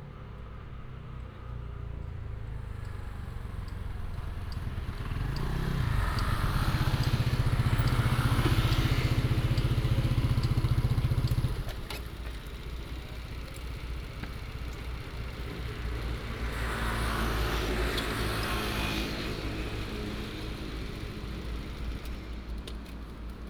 Night shop, Night outside the convenience store, Traffic sound, Frog croak
Binaural recordings, Sony PCM D100+ Soundman OKM II